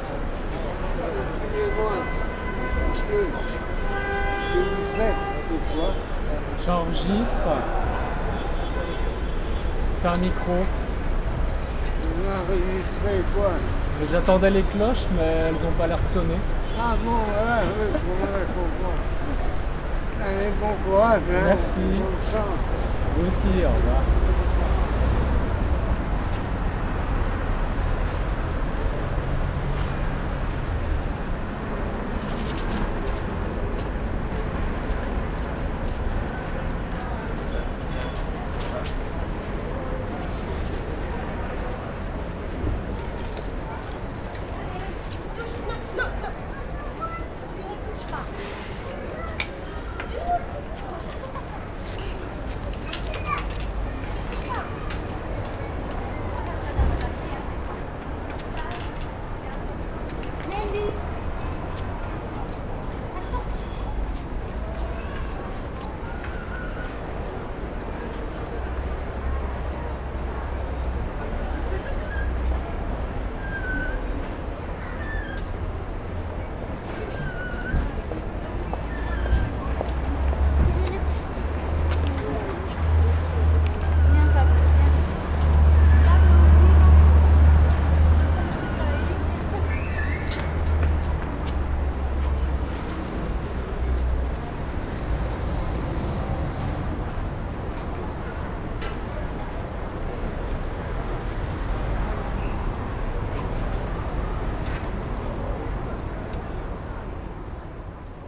Brussels, Parvis de Saint-Gilles.
Crowd, ambulance, and a homeless man asking me what I'm doing.
Parvis De St Gilles, Saint-Gilles, Belgium, 21 May 2011